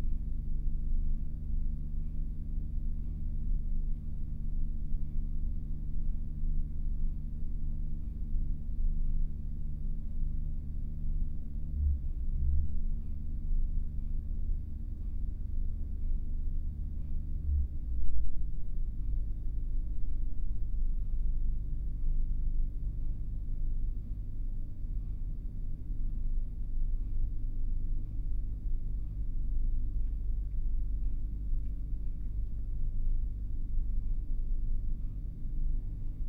{"title": "Buckley Building, Headington Rd, Oxford, UK - Wellbeing Centre Meditation", "date": "2017-09-14 12:15:00", "description": "A 20 minute meditation in the quiet/prayer room of the Wellbeing Centre at Oxford Brookes University (Pair of Sennheiser 8020s either side of a Jecklin Disk recorded on a SD MixPre6).", "latitude": "51.76", "longitude": "-1.22", "altitude": "99", "timezone": "Europe/London"}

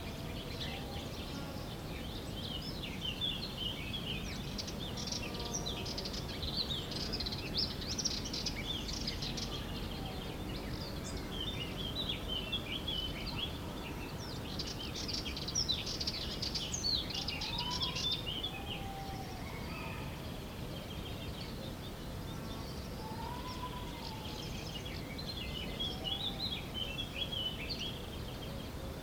{"title": "Frigiliana, Málaga, Spanien - Easter Sunday morning in small village in Analucia", "date": "2014-04-20 07:35:00", "description": "TASCAM DR-100mkII with integrated Mics", "latitude": "36.79", "longitude": "-3.90", "altitude": "333", "timezone": "Europe/Madrid"}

